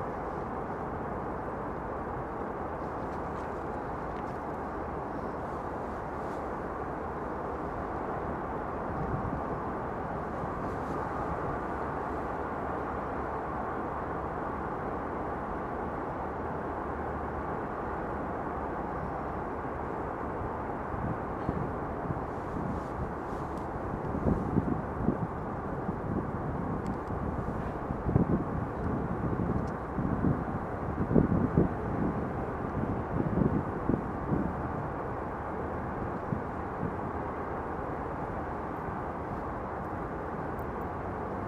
Gagarin Square. I recorded what was happening around me. Mostly you can hear the sound of passing cars. The evening of January 27, 2020. The sound was recorded on a voice recorder.